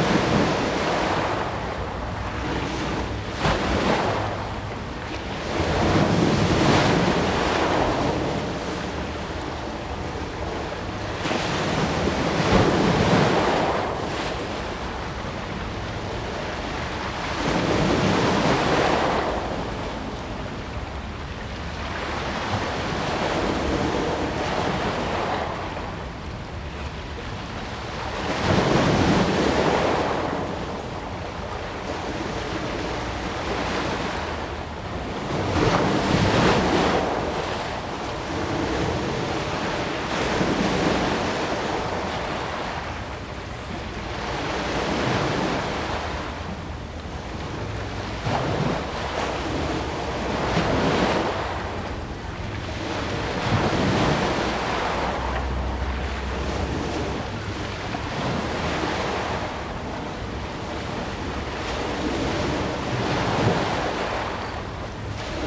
淡水海關碼頭, New Taipei City - Sound wave
At the quayside, Sound wave, The sound of the river
Zoom H2n MS+XY
Tamsui District, New Taipei City, Taiwan, 24 August